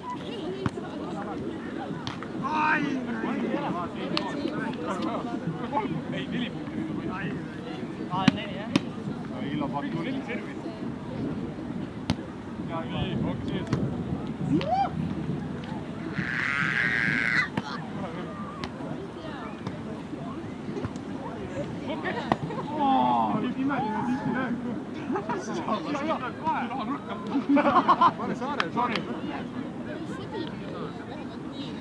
Stroomi Beach Tallinn, binaural
recording from the Sonic Surveys of Tallinn workshop, May 2010